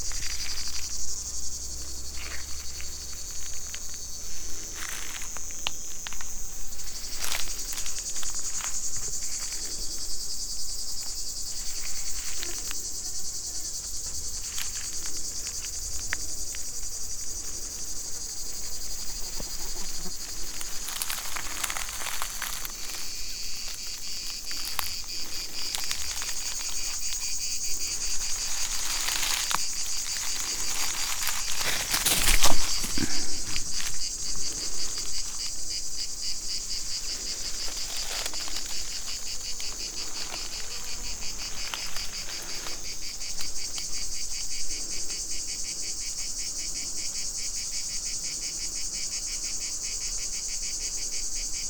quarry, Marušići, Croatia - void voices - oak grove - high summer
hot afternoon, cicadas, rabbit, high grass, dry leaves, distant thunder